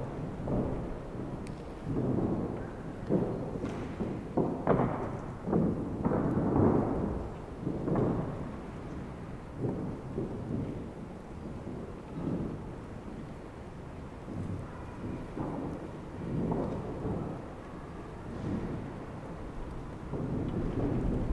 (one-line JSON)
{"title": "wind in the other dome", "description": "wind through a decaying geodesic dome, teufelsberg, berlin", "latitude": "52.50", "longitude": "13.24", "altitude": "113", "timezone": "Etc/GMT+2"}